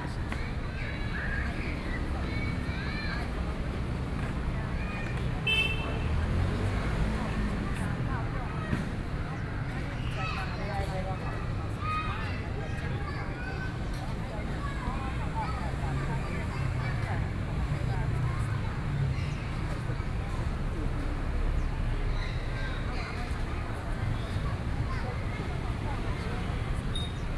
Yongkang Park, Taipei City - Holiday in the Park
Holiday in the Park, A lot of tourists, The sound of children playing games
Sony PCM D50+ Soundman OKM II